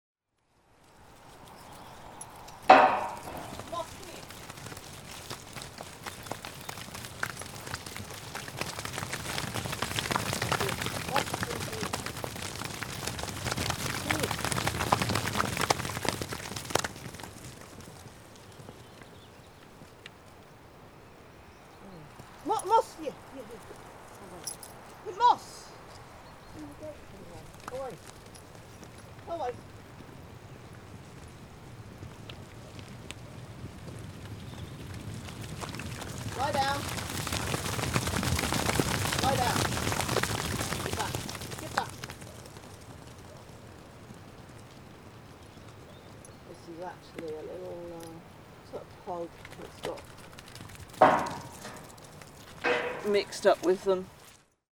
Saint John's Castlerigg and Wythburn, Cumbria, UK - Herdwicks galloping
This is the sound of Pam Hall's Herdwick flock galloping through an open gate. The Herdwick is a hardy breed of sheep with sturdy legs and a strong, characterful fleece. Herdwicks are great jumpers and are difficult to secure within a field; they are the very colour of the stones and rocks and becks and grey skies that comprise the Cumbrian landscape. Herdwicks also have an incredible feeling for their home. Raised often on common lands, they are described by farmers as being "hefted" to their hill. What this means is that they never forget the place where they grew up as a lamb, and as an adult sheep, they have a powerful instinct to return there. In interviewing Cumbrian farmers, I heard many stories of Herdwick sheep that died in the process of trying to return home. There is something very strong and determined about this breed of Lakeland sheep, and I fancy you can hear it in the thundering sound of their hooves as they move in a herd through an open gate, together.